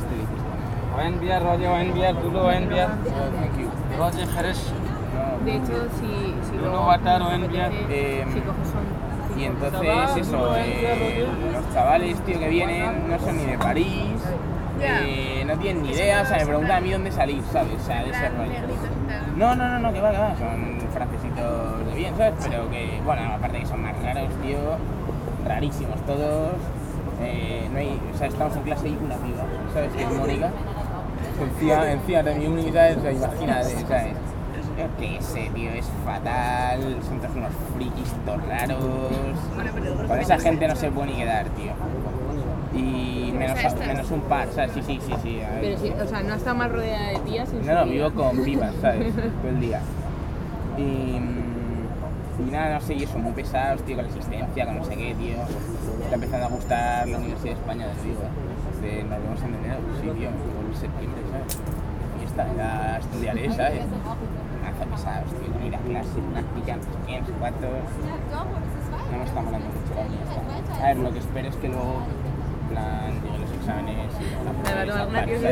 Square du Vert-Galant, Paris, France - Tourists and sun
Spanish tourist are drinking beers and enjoy the sun. A tramp is dredging german girls.